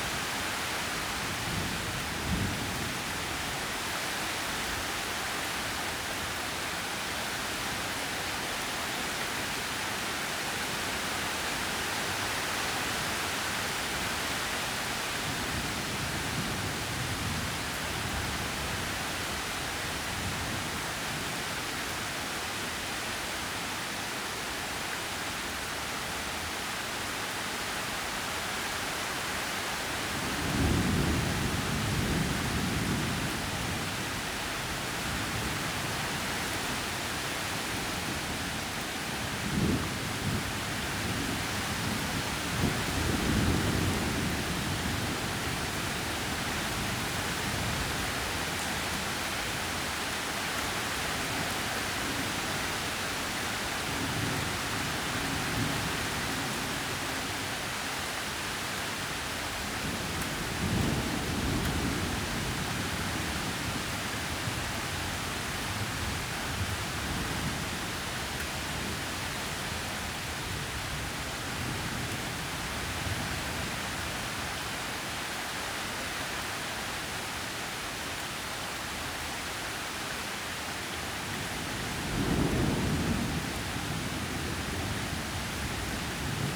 Hiddenseer Str., Berlin, Germany - Summer Thunder Storm, 55min - with baby, drums, magpie
Warm, unsettled weather. This is almost one continuous recording but a prologue and epilogue have been added to give a rounder picture of the storm's effect on Hinterhof life. The prologue - 0'00"/1'57" with baby and thunder - occurred about 10min before the rain started and the epilogue - 53'02"/55'23" with magpie and water drips - took place about 50min after it had finished. In between it's one take. The loudest thunder clap at 42'04" - much closer than all the rest - is heavily overloads the original recording. For this upload I've reduced its level. The distortion is still there but less obvious and doing this means that the rest of the recording can be brought up to a more consistent level.
Deutschland, 2 August 2019, ~16:00